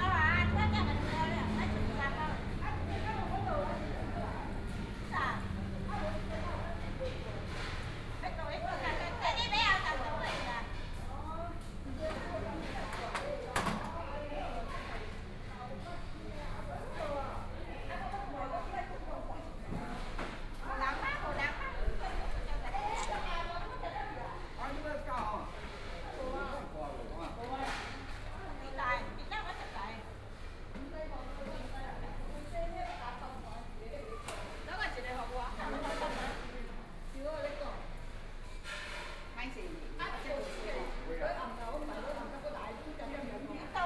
Chat between elderly
Binaural recordings